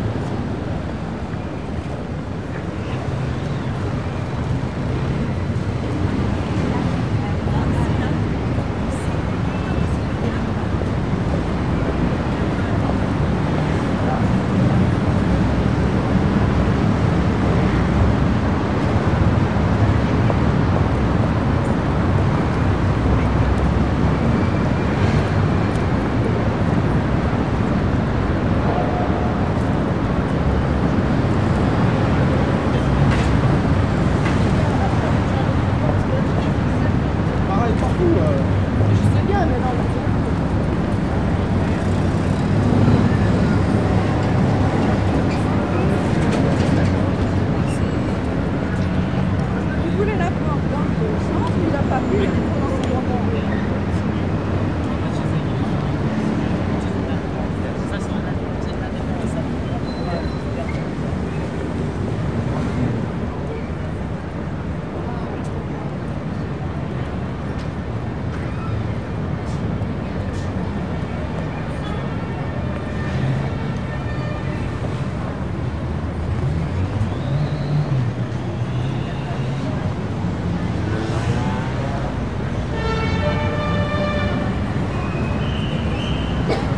paris, avenue des champs-elysees, traffic
afternoon traffic with pedestrians and a police siren
international cityscapes - topographic field recordings and social ambiences
12 December 2009, ~13:00